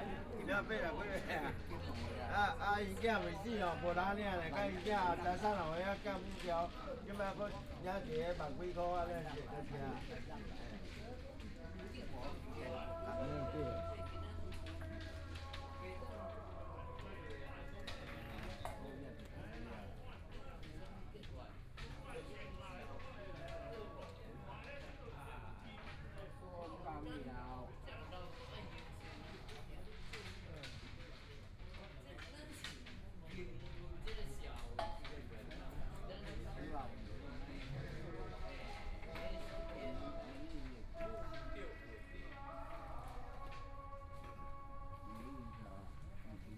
Liyu (Carp) Mountain Park, Taitung - in the Park
Dialogue among the elderly, Singing sound, Old man playing chess, Binaural recordings, Zoom H4n+ Soundman OKM II ( SoundMap2014016 -6)